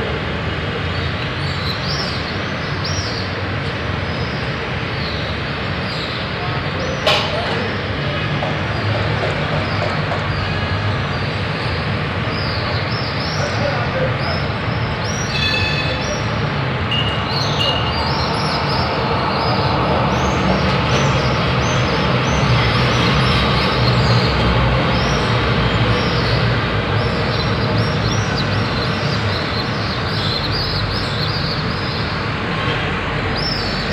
Ville Nouvelle, Tunis, Tunesien - tunis, hotel backyard, eurasian swifts and traffic in the morning
Recorded early in the morning out of the 2nd floor window into the hotel backyard. The sounds of hundreds of eurasian swifts flying low over the builings while chirping and the traffic and tram sound in the background.
international city scapes - social ambiences and topographic field recordings